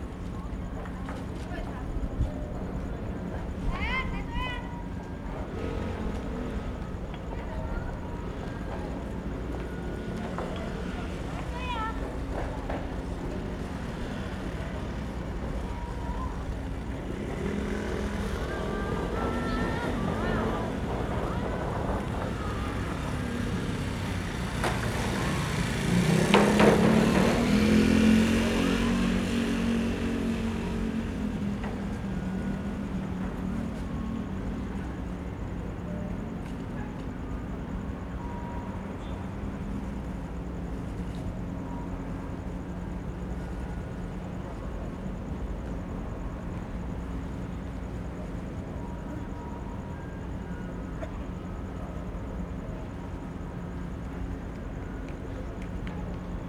Cianjhen, Kaohsiung - The park at night